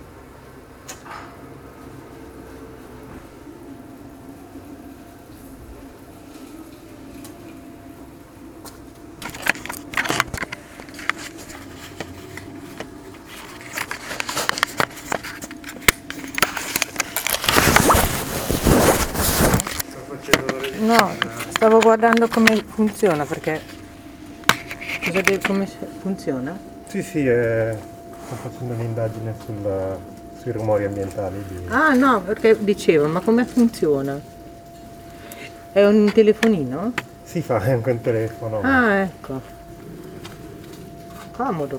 Cardano Street, Pavia, Italy - woman stealing the recorder...
this is more a socially-oriented testimony than a field recording. Might be off-topic but worth the case to post it here, as representative of social environment. Originally intended to record sounds of the street, the recorder was put on a window sill at ground floor. I was standing few meters away. An old woman passes by and intentionally puts the recorder in her pocket. When asked to give it back she justified with meaningless responses "is it a phone?" " ah... interesting thing" and heads away qietly. I was intentionally keeping quiet to understand her reactions.